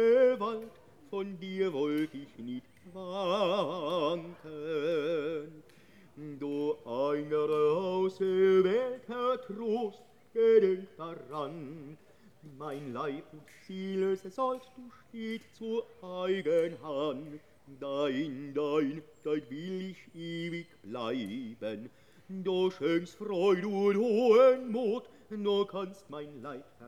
Bastei, Kurort Rathen, Deutschland - singer in the forest
Rathen, Elbe Sandstone Mountains, Saxon Switzerland (Sächsische Schweiz), in the forest below Bastei rocks. A singer in the for forest, walkers and wanderes passing by
(Sony PCM D50)
Rathen, Germany